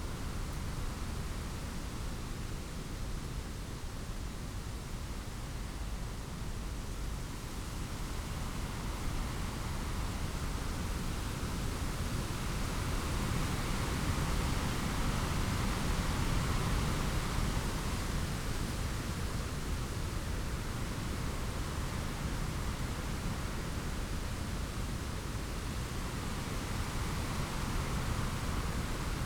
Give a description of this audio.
If you go down to the woods ... on a BTO one point tawny owl survey ... lavalier mics clipped to sandwich box ... wind through trees ... occasional passing vehicles ... pheasant calls early on ... not much else ...